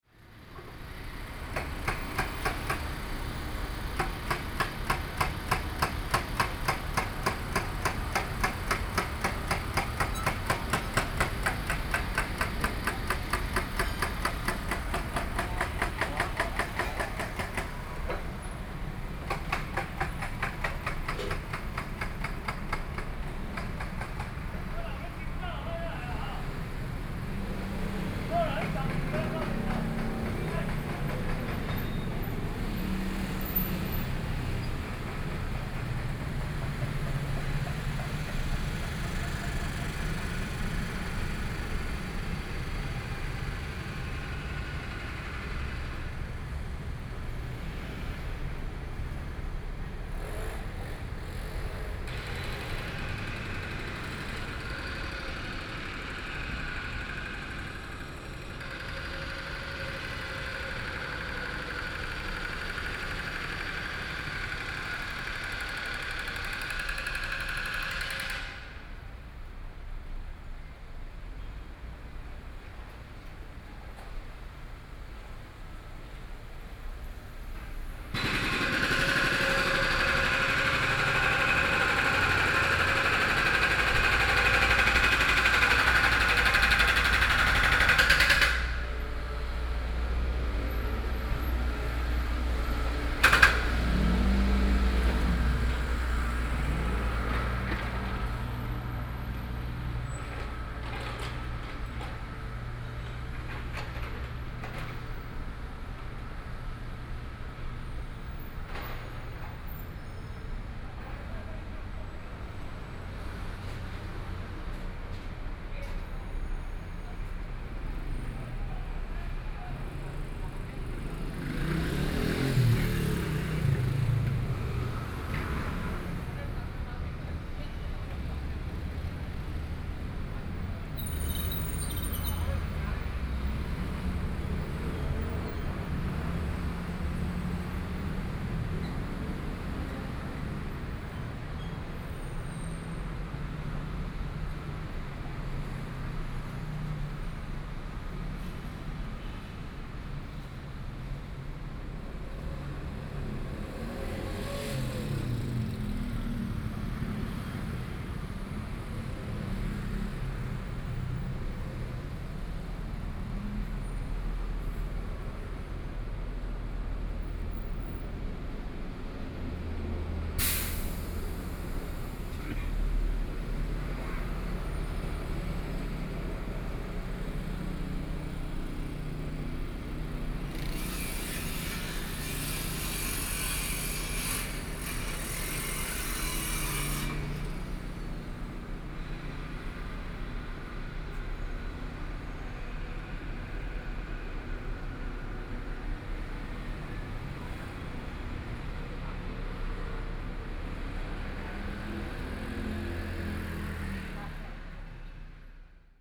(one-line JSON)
{"title": "Linsen N. Rd., Taipei City - walking on the Road", "date": "2014-04-03 11:09:00", "description": "walking on the Road, Construction Sound, Traffic Sound, Walking towards the north direction", "latitude": "25.05", "longitude": "121.52", "altitude": "18", "timezone": "Asia/Taipei"}